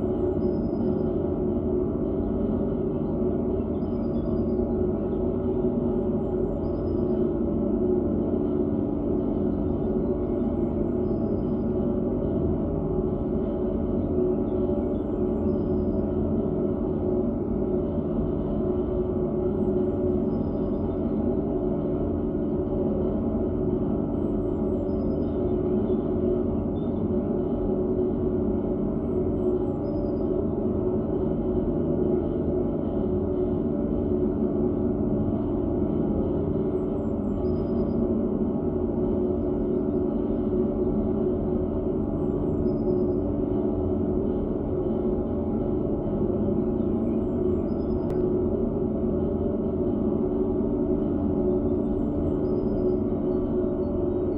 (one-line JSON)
{"title": "Königsheide, Berlin, Deutschland - well, Brunnen 18", "date": "2022-04-30 11:25:00", "description": "Berlin, Königsheide forest, one in a row of drinking water wells, now suspended\n(Sony PCM D50, DIY contact microphones)", "latitude": "52.45", "longitude": "13.49", "altitude": "36", "timezone": "Europe/Berlin"}